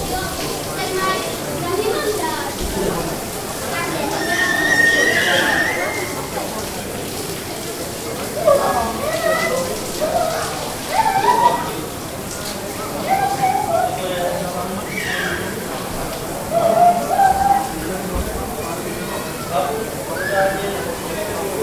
Prague, Czech Republic - YMCA swimming pool
around the inner swimming pool at YMCA - Young Men's Christian Association at Na Poříčí street.
S.r.o. Na Poříčí, Prague 1-New Town, Czech Republic, 12 September